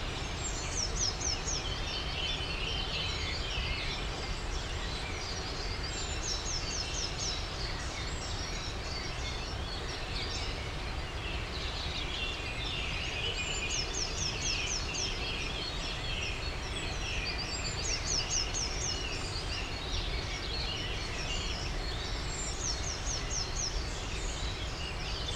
Early morning in the garden on the slopes of Jizera Mountains.